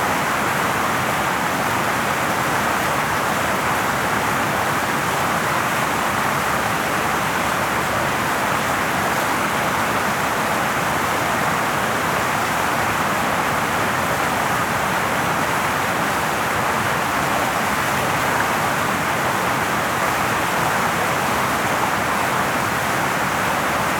Recording of Avenue of the Americas Fountain that features a small waterfall.
New York, United States